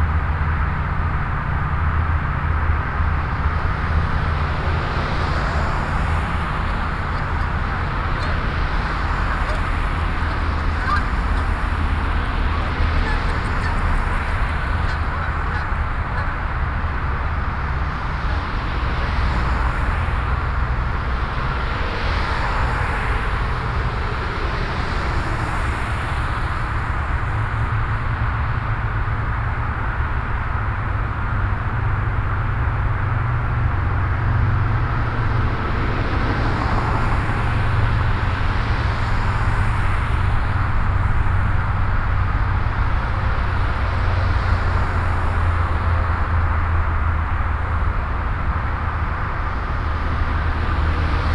Fairfax, Fair Oaks Mall, Ducks and road traffic
USA, Virginia, road traffic, cars, ducks, binaural